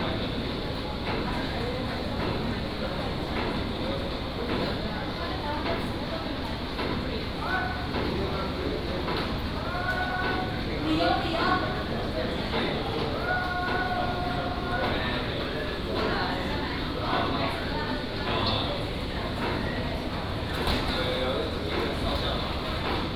Beigan Airport, Taiwan - At the airport
At the airport, Baggage claim area